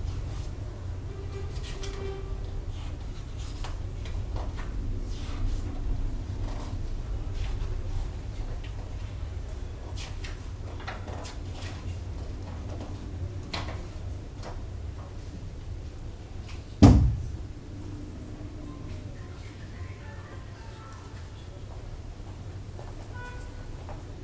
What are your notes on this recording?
A quiet library space for the 'Societe et Civilisation' section (recorded using the internal microphones of a Tascam DR-40)